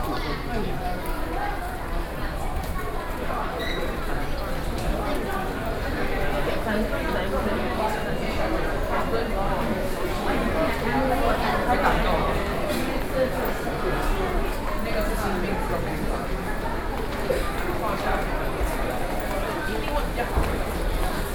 {
  "title": "Taipei Main Station, Taiwan - SoundWalk",
  "date": "2012-10-28 14:39:00",
  "latitude": "25.05",
  "longitude": "121.52",
  "altitude": "16",
  "timezone": "Asia/Taipei"
}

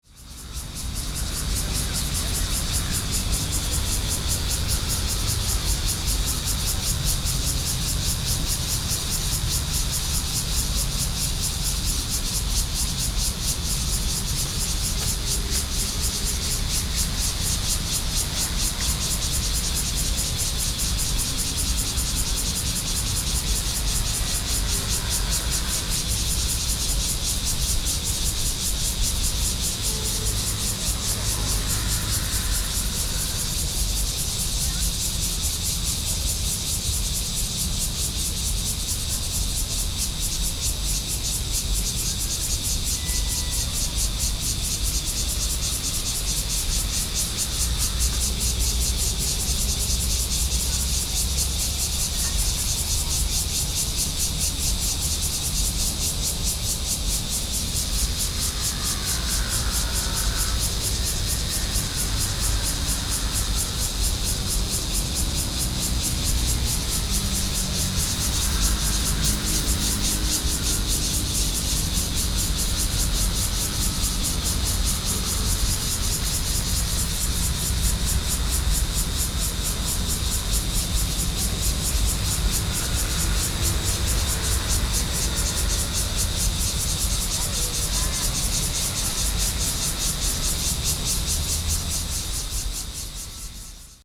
{
  "title": "Sec., Longmi Rd., Bali Dist., New Taipei City - Cicada and traffic sound",
  "date": "2012-07-06 17:47:00",
  "description": "Under the bridge, singing\nSony PCM D50",
  "latitude": "25.12",
  "longitude": "121.46",
  "altitude": "7",
  "timezone": "Asia/Taipei"
}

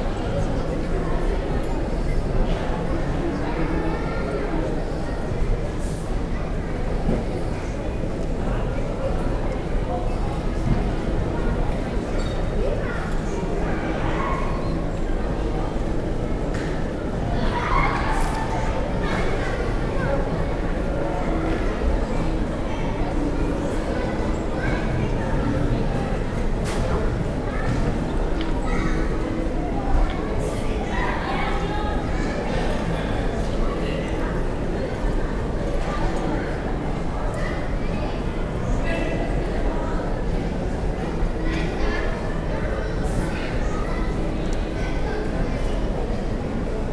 {
  "title": "frankfurt, airport, terminal 2, departure zone",
  "date": "2010-07-23 12:53:00",
  "description": "at frankfurtam main in the airport terminal 2 in the departure zone - restaurant - fast food area\nsoundmap d - social ambiences and topographic field recordings",
  "latitude": "50.05",
  "longitude": "8.59",
  "altitude": "123",
  "timezone": "Europe/Berlin"
}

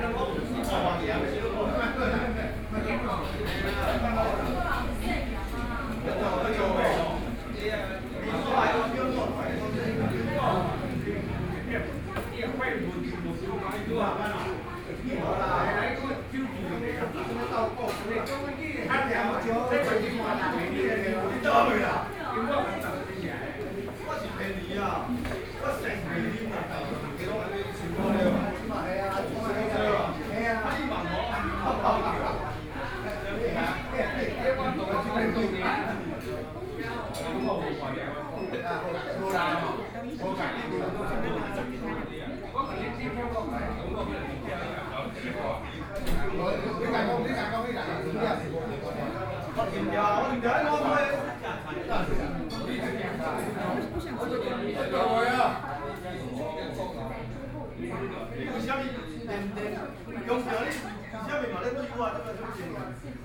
{
  "title": "Houli District, Taichung City - in the restaurant",
  "date": "2014-03-11 17:56:00",
  "description": "in the restaurant\nBinaural recordings",
  "latitude": "24.31",
  "longitude": "120.73",
  "timezone": "Asia/Taipei"
}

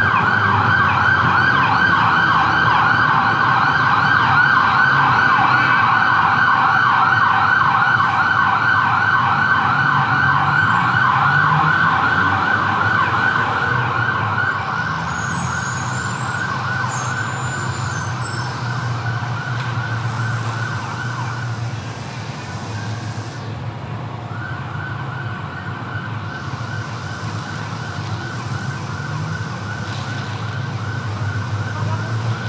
{
  "title": "Calle 116 #23-06 Oficina 507 Edificio: Business Center 116 Oficina:, Bogotá, Colombia - Ambience in Bogta",
  "date": "2021-05-10 15:30:00",
  "description": "Crowded avenue in Bogota, which has two fundamental sounds like the trafic and the wind. To complete the sound spectrum there are some sound signs like motorcycle, car's horn, motors, reverse beeps and an ambulance. Also for some sound marks, we can hear a lawn mower, car´s breaks (for the traffic lights) and a little bit of voices",
  "latitude": "4.70",
  "longitude": "-74.07",
  "altitude": "2549",
  "timezone": "America/Bogota"
}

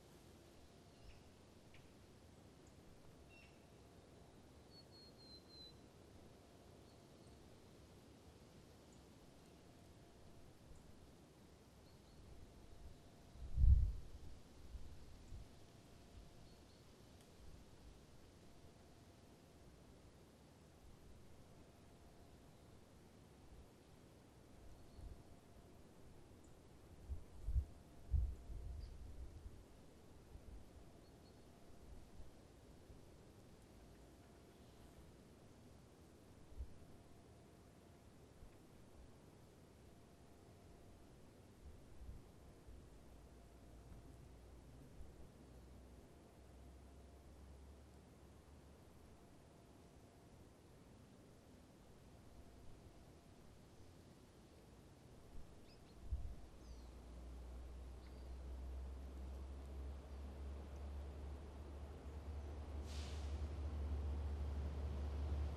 {
  "title": "Trehörningsjö, fåglar i skogen - Birds in the woods",
  "date": "2010-07-19 10:44:00",
  "description": "Birds and insects in the wood. This was not recorded on the soundwalk on the World Listening Day, but the day after the 19th july. The WLD was rather windy so I want to include one recording with less surrounding wind in this collection, just to give an idea. The wind is really changing all the soundscape in the woods and surroundings.",
  "latitude": "63.69",
  "longitude": "18.86",
  "altitude": "158",
  "timezone": "Europe/Stockholm"
}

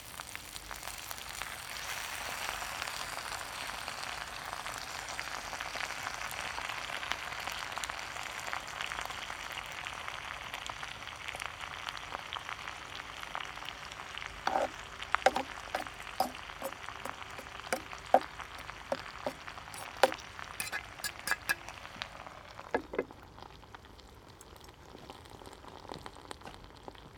{
  "title": "Chemin des Sablons, La Rochelle, France - Tagine cooking in the garden",
  "date": "2020-04-17 12:40:00",
  "description": "Tagine cooking in the garden\nORTF DPA 4022 + Rycotte + Mix 2000 AETA + edirol R4Pro",
  "latitude": "46.17",
  "longitude": "-1.21",
  "altitude": "10",
  "timezone": "Europe/Paris"
}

